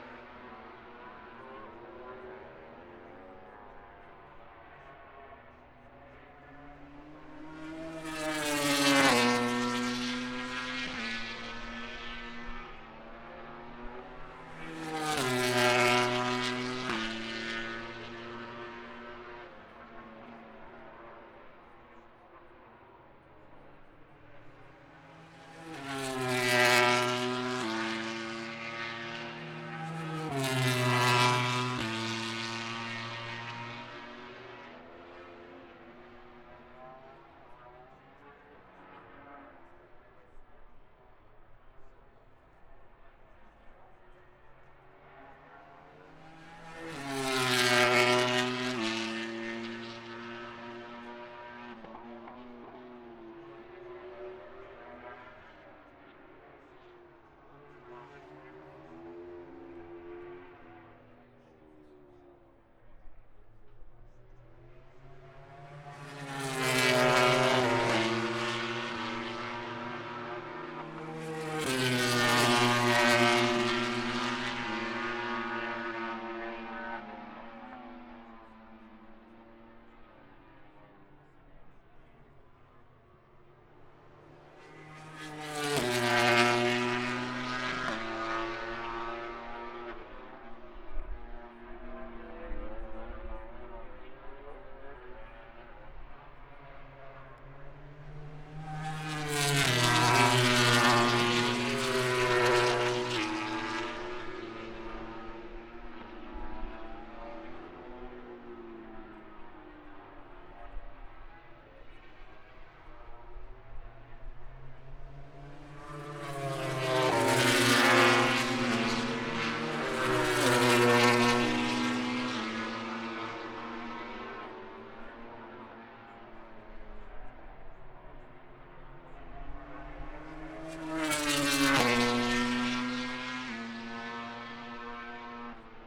british motorcycle grand prix 2022 ... moto grand prix free practice one ... zoom h4n pro integral mics ... on mini tripod ...
West Northamptonshire, England, United Kingdom, 5 August 2022